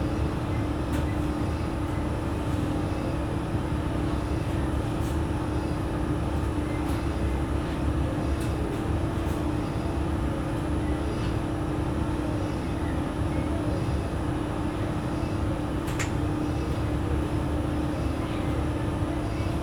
Poznan, Jana III Sobieskiego housing estate - drycleaner’s
binaural recording. standing in front of a 24h drycleaner’s. conversations of the staff and radio choked by hum of commercial washing machines.
11 July 2014, ~22:00